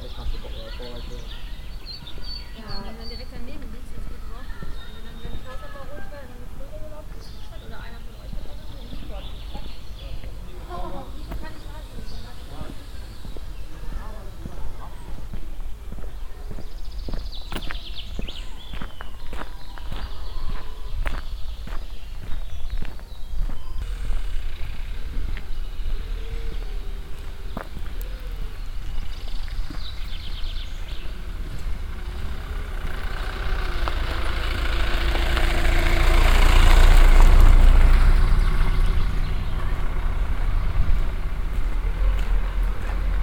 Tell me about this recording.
soundmap nrw: social ambiences/ listen to the people in & outdoor topographic field recordings